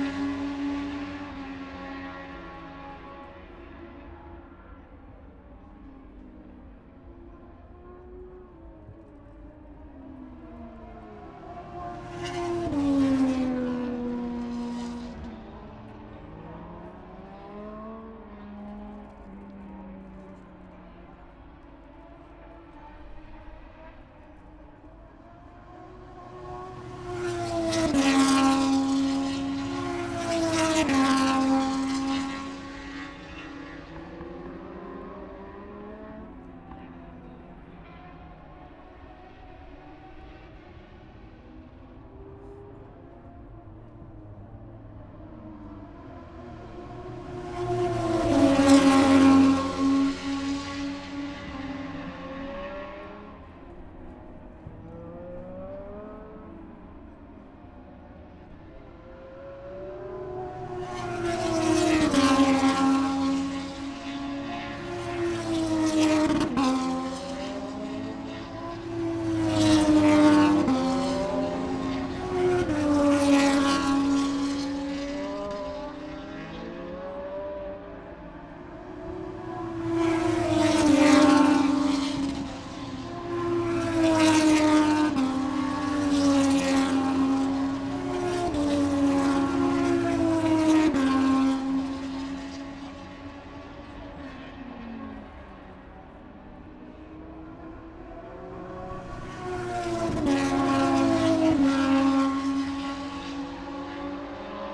british motorcycle grand prix 2022 ... moto two ... free practice one ... dpa 4060s on t bar on tripod to zoom f6 ...
5 August 2022, 10:49